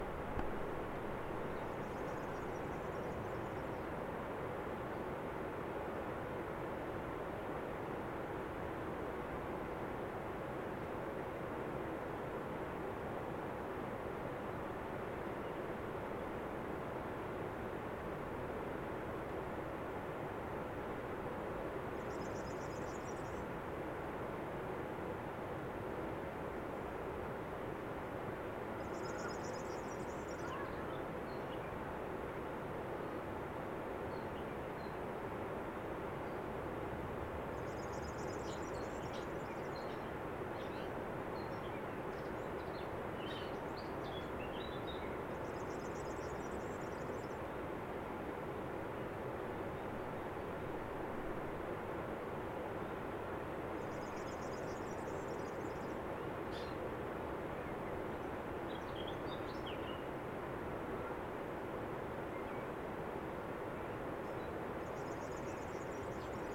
Aussenaufnahme. Lautes Rauschen der Trisanna; Vogelstimmen
Kappl, Austria